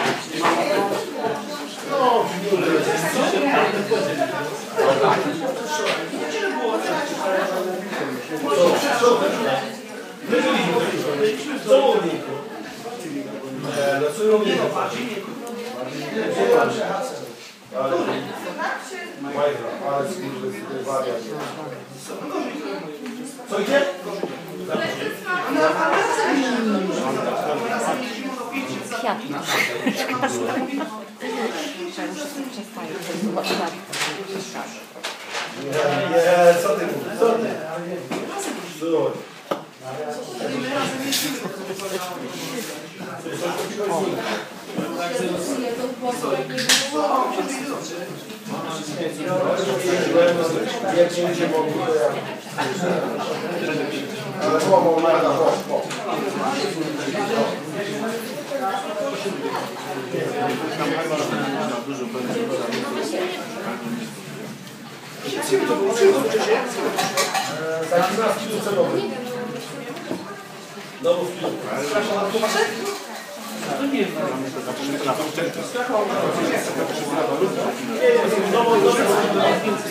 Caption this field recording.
Lots of laughter - people are happy because the sun came out first time this winter.